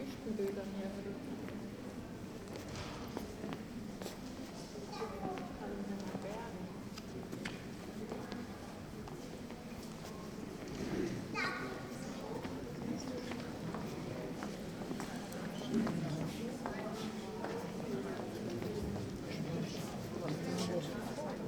berlin, alte jakobstraße: berlinische galerie - the city, the country & me: walk through berlinische galerie
a walk through the berlinische galerie (with guided tour to an exhibition of marianne breslauer in the background)
the city, the country & me: october 31, 2010